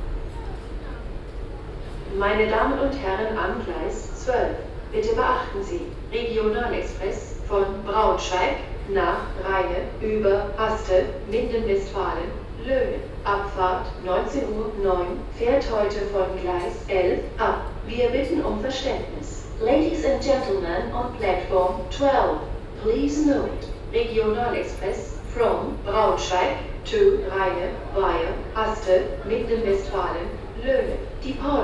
hannover, hbf, gleis 12 ansage - hannover, hbf, gleis 11 ansage
auf den gleisen am frühen abend, eine zugansage
soundmap d:
social ambiences, topographic field recordings
hauptbahnhof, gleis